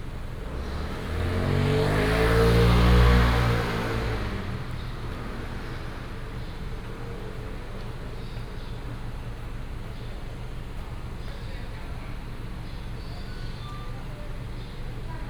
Shuidui Rd., Wugu Dist. - Morning in the street
Morning, in the street, Traffic sound, birds sound
Wugu District, 水碓路3號, 6 May 2017